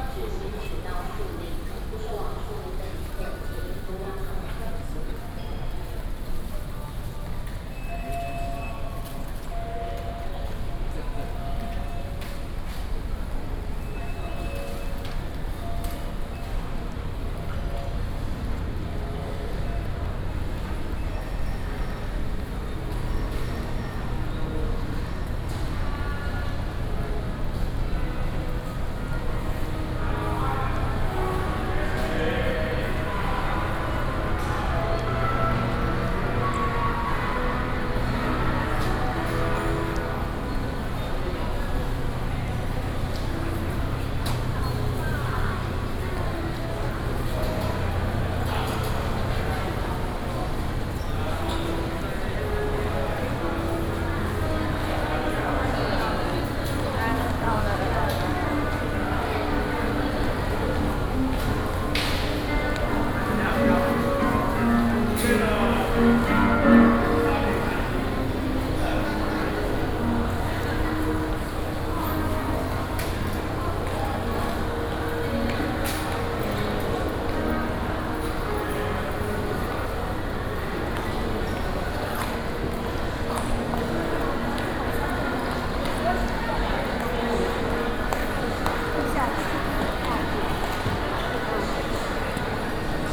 Walking in the station hall
Sony PCM D50+ Soundman OKM II
Banqiao District, New Taipei City, Taiwan, June 2012